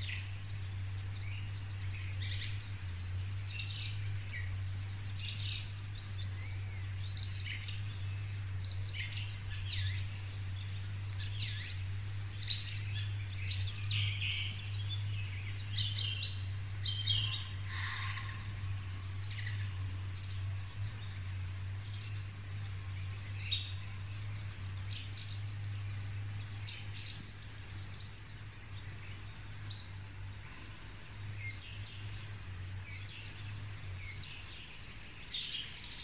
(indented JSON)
{
  "title": "Unnamed Road, Břeclav, Česko - Forest sounds",
  "date": "2020-03-23 16:36:00",
  "description": "Spring, forest, bird sounds",
  "latitude": "48.77",
  "longitude": "16.85",
  "altitude": "159",
  "timezone": "Europe/Prague"
}